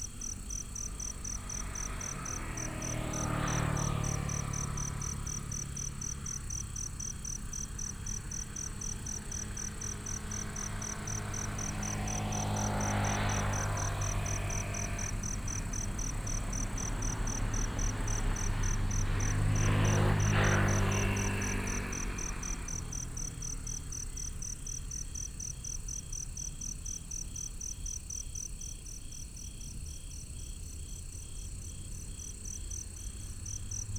都會園路, Xitun Dist., Taichung City - Insects
Insects sound, Traffic sound, Binaural recordings, Sony PCM D100+ Soundman OKM II
Xitun District, 都會園路, 9 October 2017